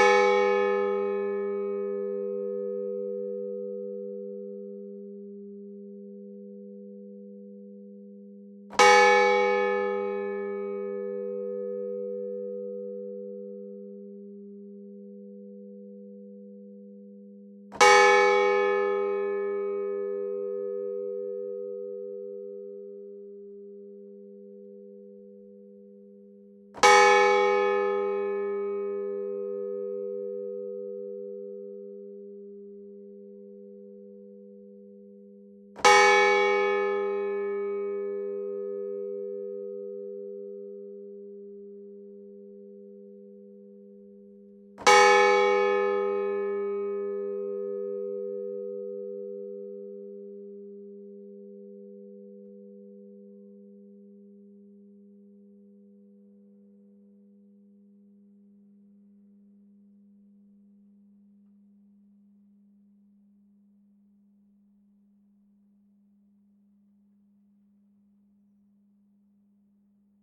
Autheuil, France - Autheuil - Église St-Avit
Autheuil (Eure-et-Loir)
Église St-Avit
Le Glas